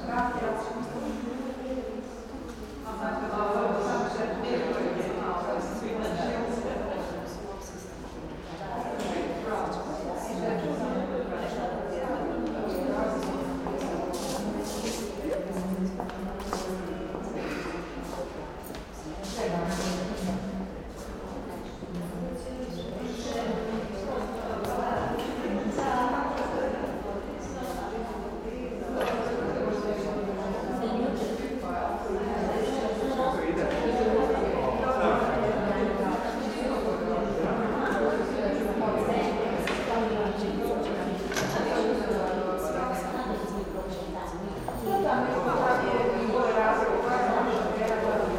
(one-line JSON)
{"title": "Rijeka, Dolac 1, MMSU, Pierre Schaefer", "description": "- Interlude - Presentation of Conference Pierre Schaeffer: mediArt", "latitude": "45.33", "longitude": "14.44", "altitude": "15", "timezone": "Europe/Berlin"}